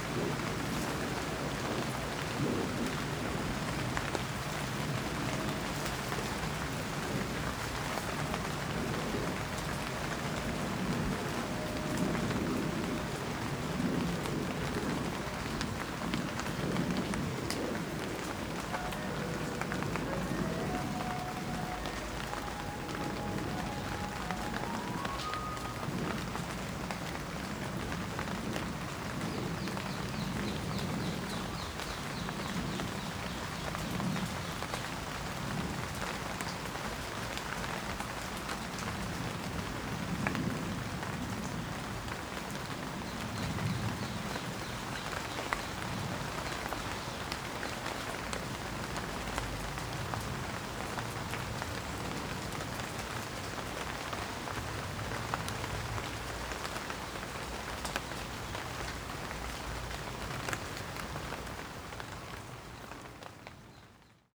London Borough of Lewisham, Greater London, UK - Ice Cream in the Rain
Ice cream vans seem very hard working in this part of town. Heard while recording foxes and parakeets at Hither Green Cemetery